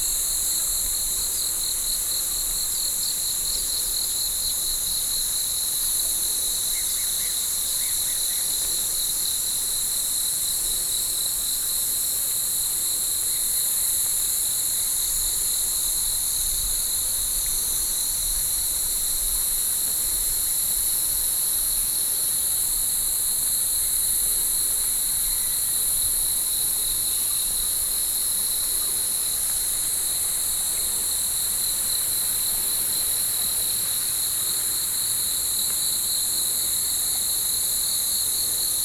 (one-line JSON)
{"title": "Shilin District, Taipei - Early in the morning", "date": "2012-06-23 05:40:00", "description": "Early in the morning, River bank, Sony PCM D50 + Soundman OKM II", "latitude": "25.12", "longitude": "121.57", "altitude": "165", "timezone": "Asia/Taipei"}